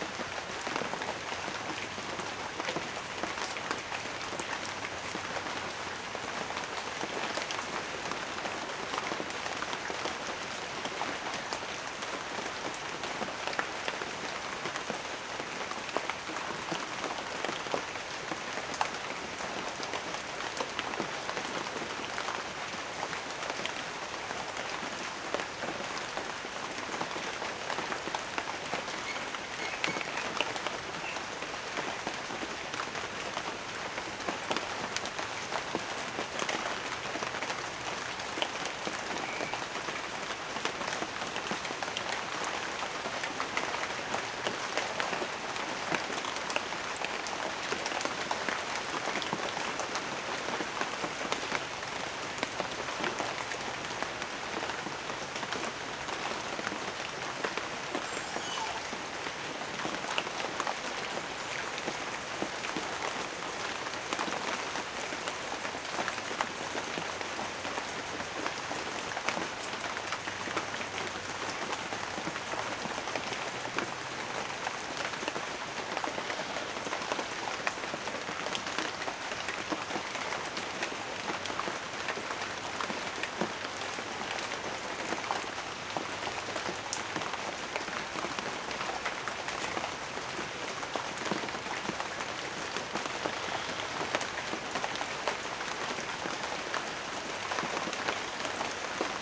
Telaya, Veracruz, Mexico - Light Rain
Light rain in a field of bananas trees
AB setup by 2 B&k 4006
Veracruz de Ignacio de la Llave, México, 4 February 2020, 10:00